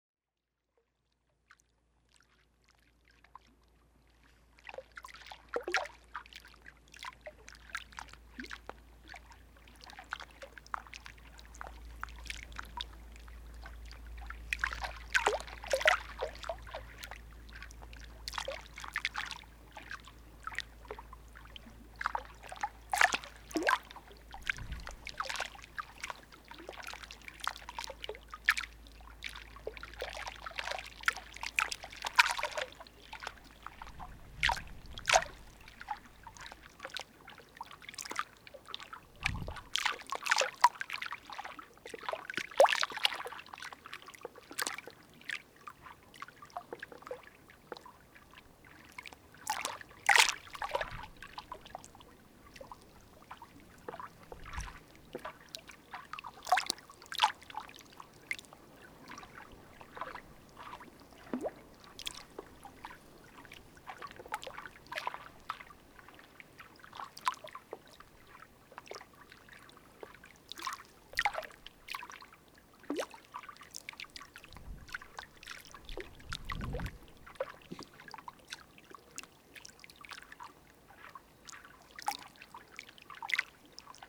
Saint-Martin-de-Boscherville, France - River bank
On the river embankment, the soft sound of the water flowing.
18 September